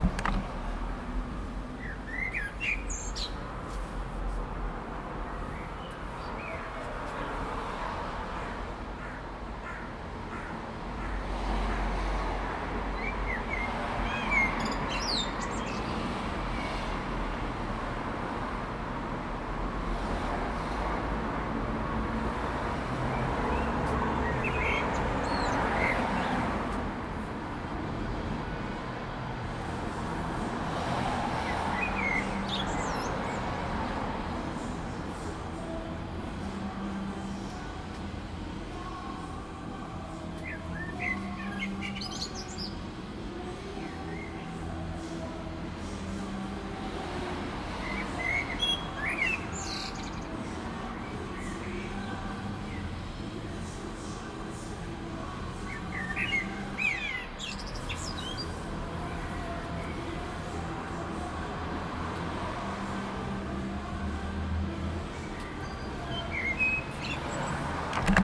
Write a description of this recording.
Traffic, birds and a neighbours music